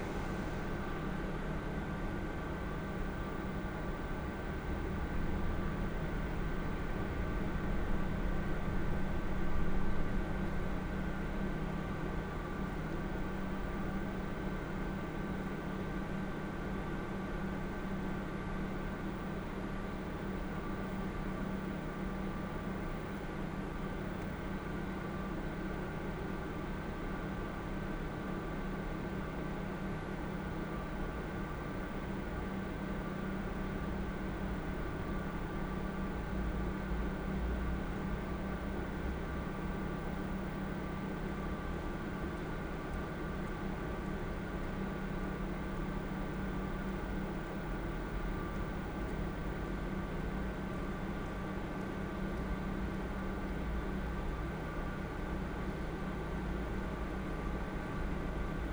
early morning ambience in the great hall, at Trieste main station
(SD702, DPA4060)
Trieste, Italy